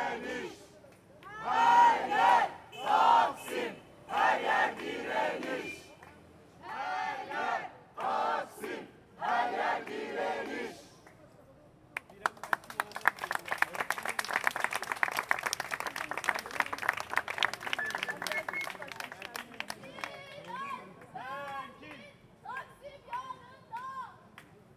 People are showing their indignation about the police brutality during the Gazi park event, several people are still into coma due to abuse of violence.
Beyoğlu/Istanbul Province, Turkey, August 2013